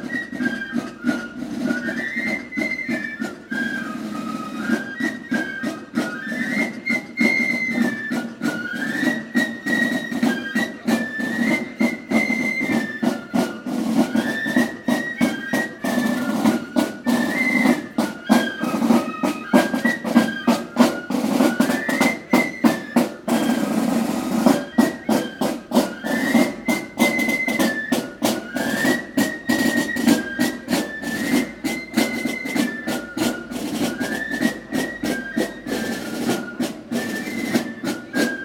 Hawick, Scottish Borders, UK - Hawick Common Riding - Drum & Fife Recording
The Hawick Common Riding festival celebrates the capture of an English Flag in 1514 by the youth of Hawick at a place called Hornshole, as well as the ancient custom of riding the marches or boundaries of the common land. The day begins at 6am with the Drum & Fife band setting out past the Church, playing loudly to "rouse the town". This is the sound of the situation as they passed us beside the Church. There is a nice acoustic, because the sounds of the band passing reflect off the walls of the buildings opposite the church. Recorded with Naiant X-X mics with little windjammers on them, held about a foot apart.